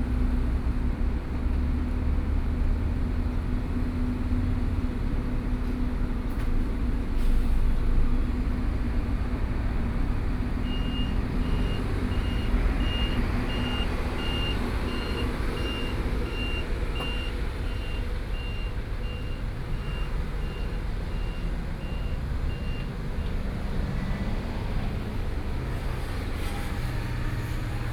Jishui Rd., Wujie Township - Traffic Sound
In the convenience store, Traffic Sound, Hot weather